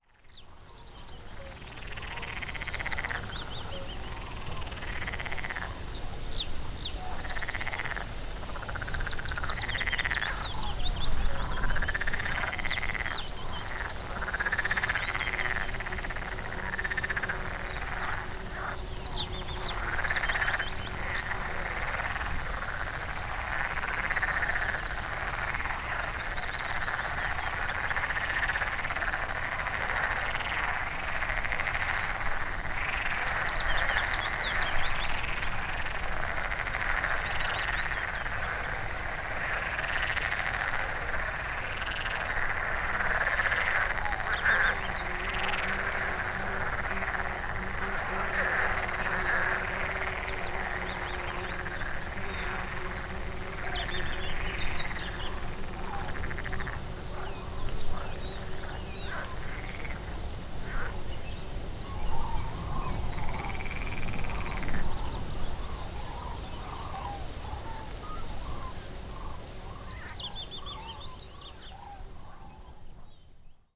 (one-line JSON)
{"title": "Linum, Fehrbellin, Allemagne - Frog Chorus", "date": "2022-04-19 12:30:00", "description": "Frog chorus recorded along ponds at Linum, Brandeburg (the \"Stork village\")\nZoom H5 + Audio Technica BP4025", "latitude": "52.77", "longitude": "12.88", "altitude": "33", "timezone": "Europe/Berlin"}